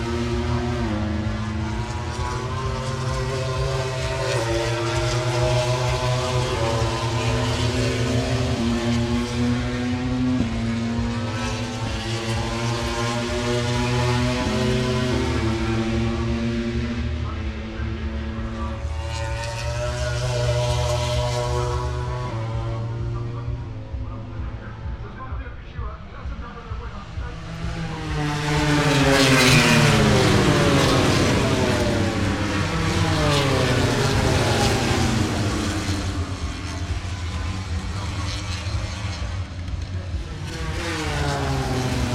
Silverstone Circuit, Towcester, UK - british motorcycle grand prix 2019 ... moto grand prix ... fp1 ...
british motorcycle grand prix 2019 ... moto grand prix ... free practice one ... some commentary ... lavalier mics clipped to bag ... background noise ... the disco in the entertainment zone ...